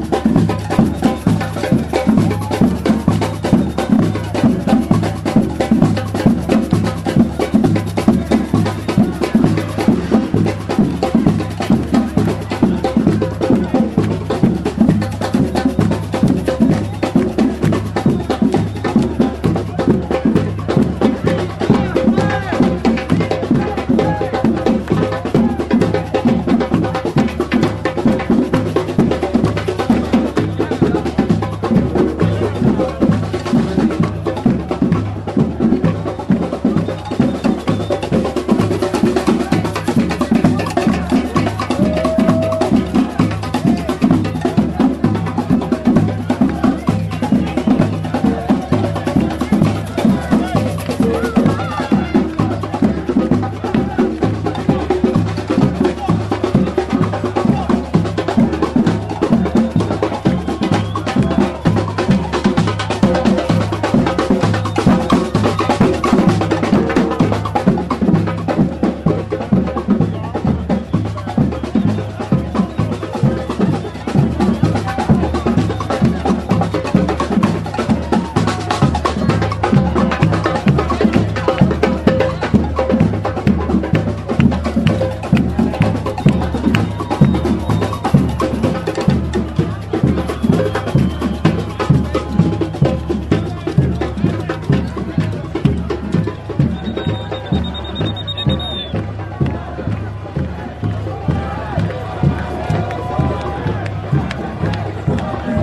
equipment used: Marantz
Quebec independence march next to Parc Jeanne Mance, caught me by surprise when recording sounds at the park